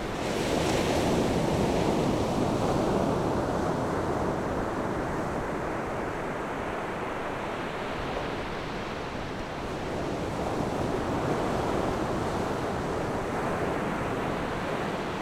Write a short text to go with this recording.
Sound wave, In the beach, Zoom H6 +Rode NT4